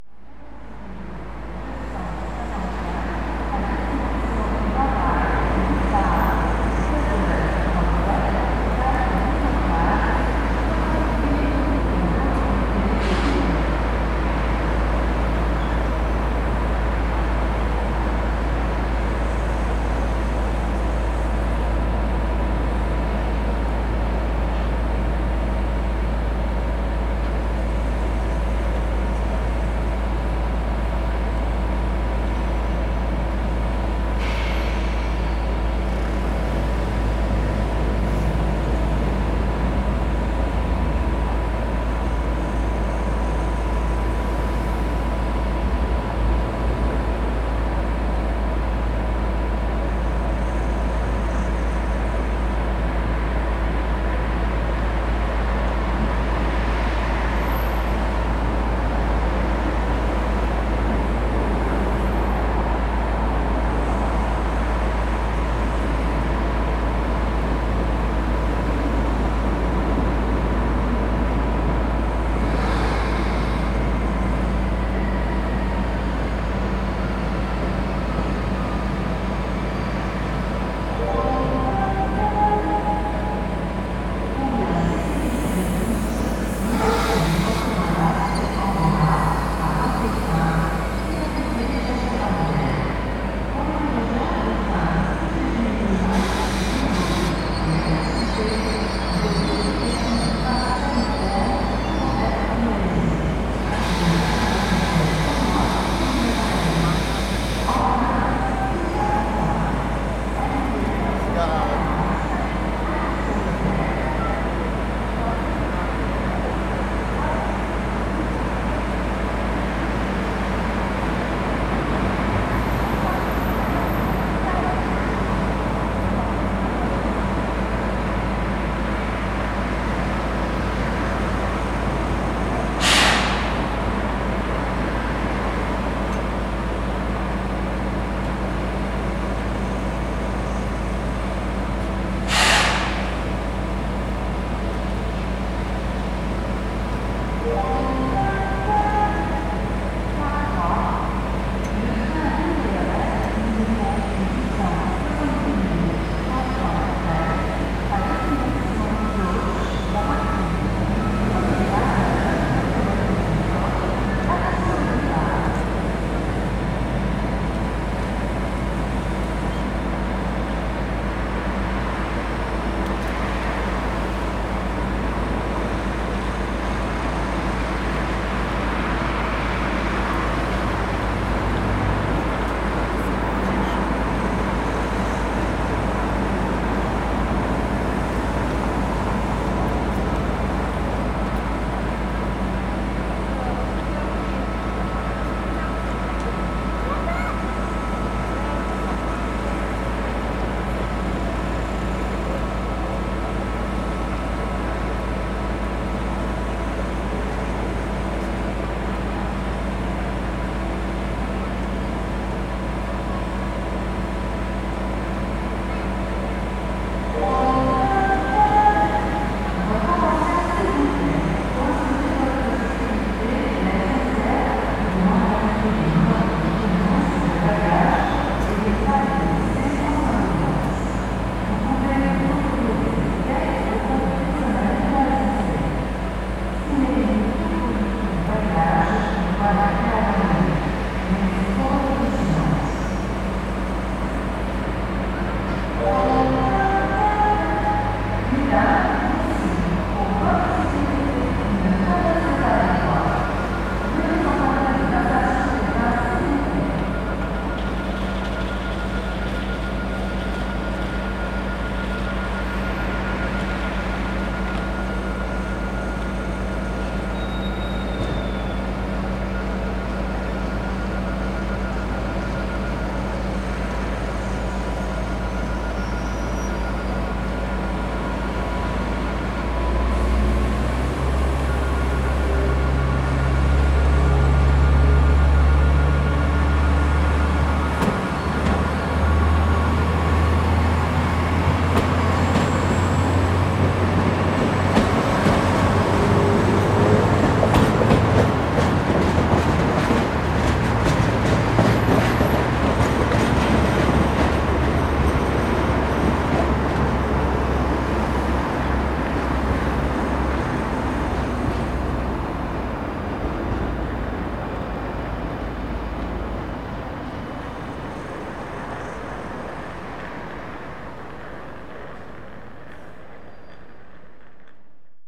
Marengo – SNCF, Toulouse, France - SNCF station
station, train, street, road, car, people
Occitanie, France métropolitaine, France, 5 November, 15:30